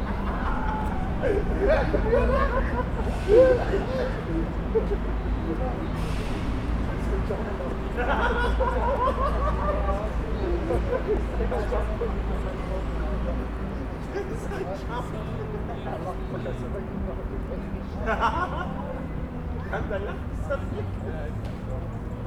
Innsbruck, vogelweide, Waltherpark, Österreich - Frühling im Waltherpark/vogelweide
walther, park, vogel, weide, leise musik, jogger, reden, lachen, husten, fahrradweg, fahrradketten, gitarre, singen, glocken, käfiggeräusch, fußball gegen gitter, waltherpark, vogelweide, fm vogel, bird lab mapping waltherpark realities experiment III, soundscapes, wiese, parkfeelin, tyrol, austria, anpruggen, st.